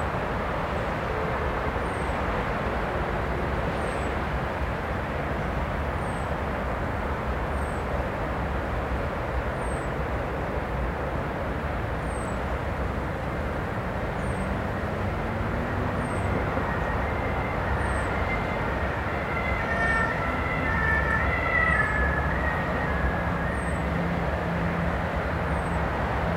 Balma, France - circulation
highway, birds, wind in trees, river
metro, nature, car, truck, ambulance